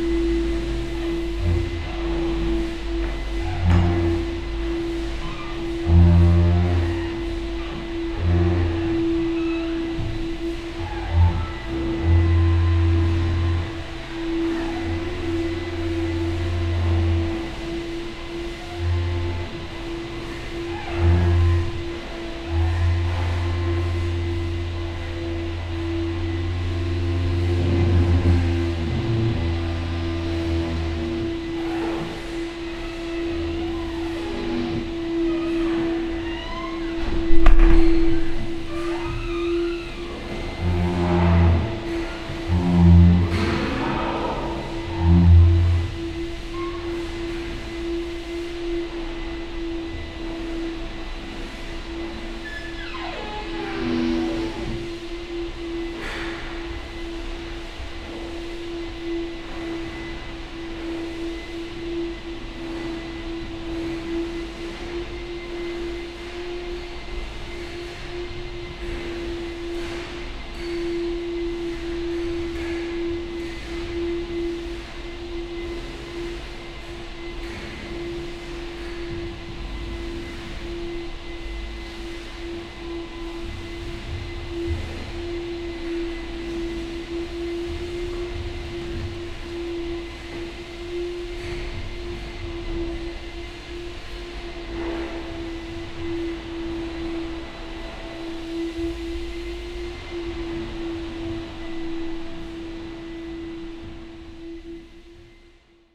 monday morning, inside and outside merge
Sonopoetic paths Berlin
Deutschland, European Union, 7 September 2015, ~10:00